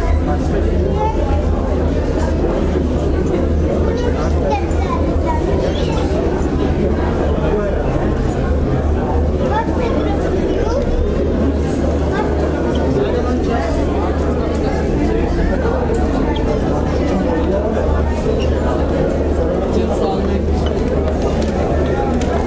Kadiköy ferry terminal, waiting crowd
Istanbul is very dense. You walk and you sit and you stand among as many people as the space around you can bear. This is the ferry terminal in Kadıköy, bearing a very compressed quantity of people waiting to get on the boat.